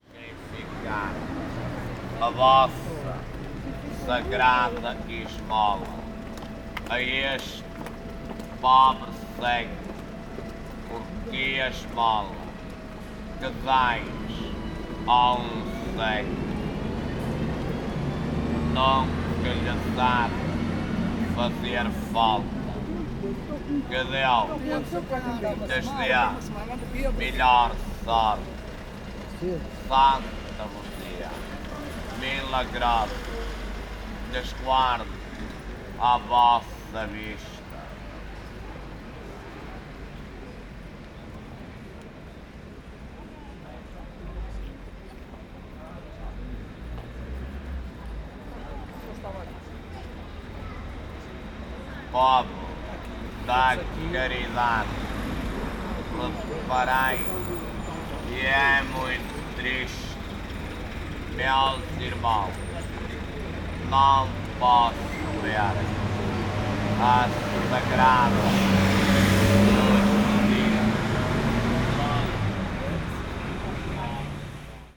Porto, R. de S. Catarina - beggar
beggar at rua de santa catarina. many beggars, blind fortune sellers and handicaped people on the streets
Oporto, Portugal